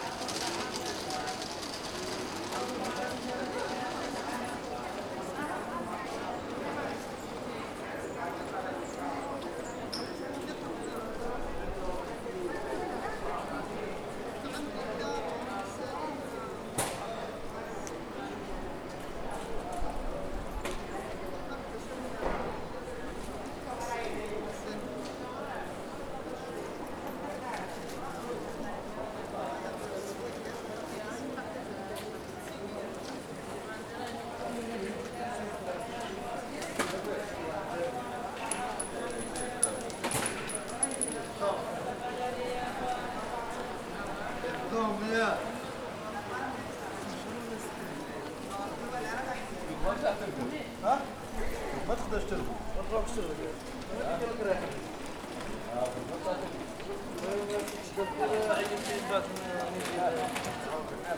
Rue Jules Joffrin, Saint-Denis, France - Side of Covered Market, Saint Denis
This recording is one of a series of recording mapping the changing soundscape of Saint-Denis (Recorded with the internal microphones of a Tascam DR-40).
27 May, 11:00